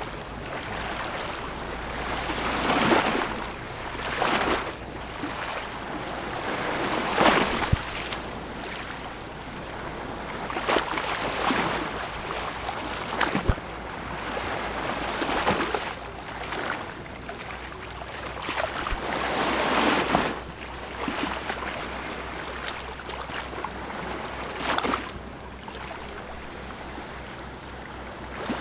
Sigtuna, Suecia - Mälar lake.
Soundscape by the sea in an area with a kind of ravine. The wind blows a little and hear the sea moved.
Paisatge sonor a la vora del mar en una zona amb una espècie de canyigueral. El vent bufa una mica i el mar d'escolta alterat.
Paisaje sonoro al lado del mar en una zona con una especie de cañada. El viento sopla un poco y el mar se escucha movido.
Sigtuna, Sweden, 12 August 2016, 14:00